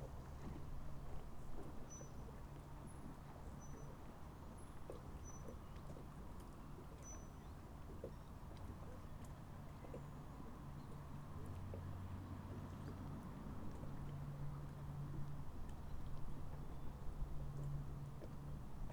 Asker, Norway, on a shore